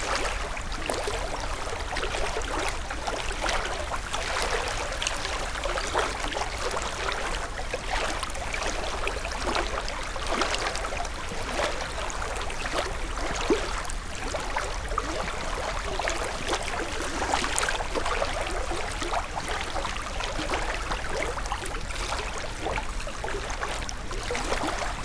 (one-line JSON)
{"title": "Maintenon, France - Guéreau river", "date": "2016-12-29 15:30:00", "description": "The Guéreau river flowing quietly during winter times.", "latitude": "48.59", "longitude": "1.58", "altitude": "99", "timezone": "GMT+1"}